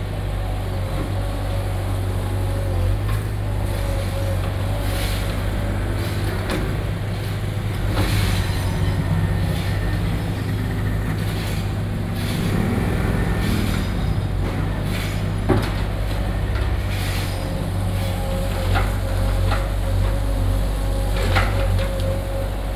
Sec., Zhongyang N. Rd., Beitou Dist., Taipei City - Road Construction
Beitou District, Taipei City, Taiwan, 12 November, 13:37